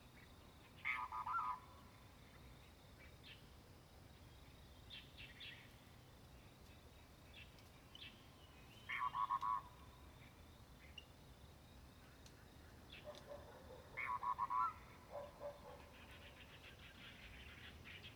in the wetlands, Bird sounds, Traffic Sound
Zoom H2n MS+XY